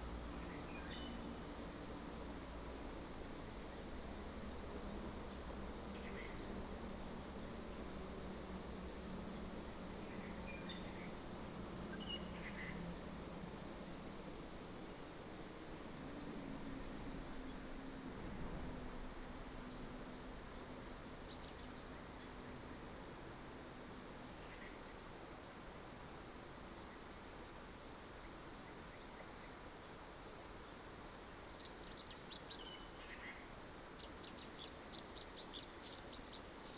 雙流國家森林遊樂區, Shizi Township - Stream and birds sound
Entrance to a forest recreation area, in the morning, Traffic sound, Bird call, Stream sound
Binaural recordings, Sony PCM D100+ Soundman OKM II
Shizi Township, 丹路二巷23號, March 2018